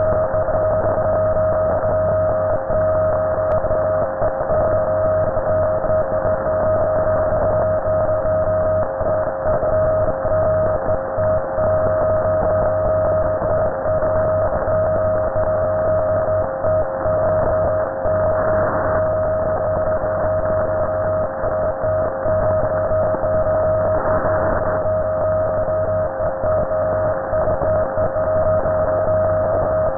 Puerto Percy, Magallanes y la Antártica Chilena, Chile - storm log - radiostorm highwire II
radiostorm, statics 145.2581MHz, Nooelec SDR + upconverter at highwire (looped 5 times)
This is part of a series of recordings, shifting to another frequency spectrum. Found structures, mainly old cattle fences and unused telephone lines are used as long wire antennas wit a HF balun and a NESDR SMArt SDR + Ham It Up Nano HF/MF/NF upconverter.
Región de Magallanes y de la Antártica Chilena, Chile, 17 February 2021